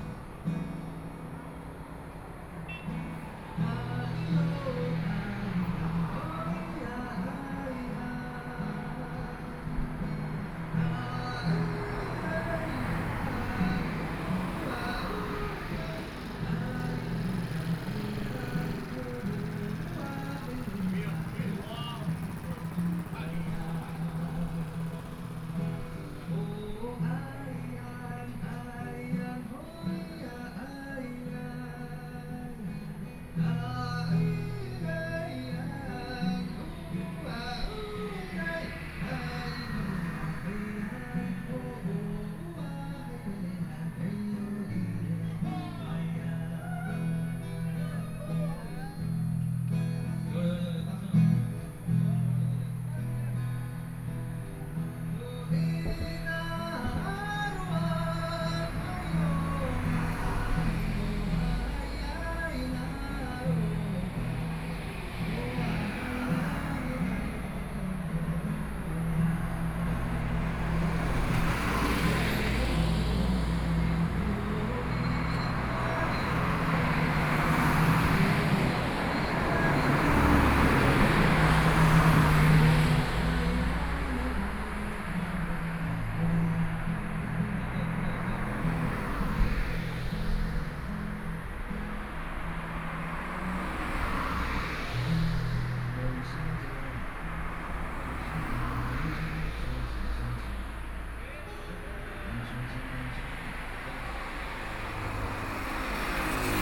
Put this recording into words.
At the roadside, Traffic Sound, Small village